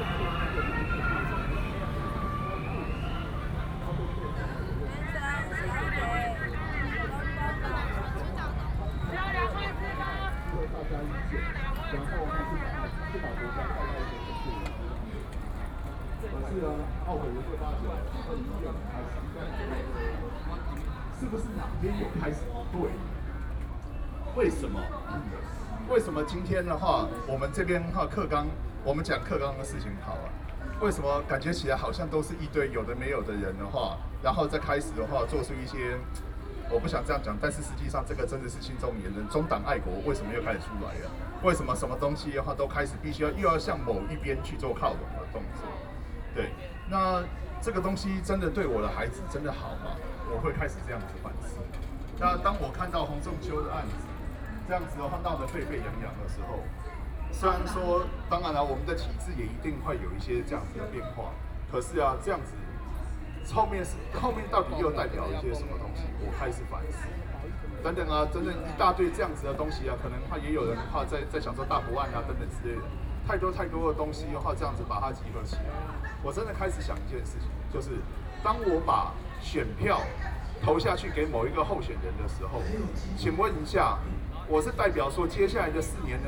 Qingdao E. Rd., Taipei City - Protest
Walking through the site in protest, People and students occupied the Legislature
Binaural recordings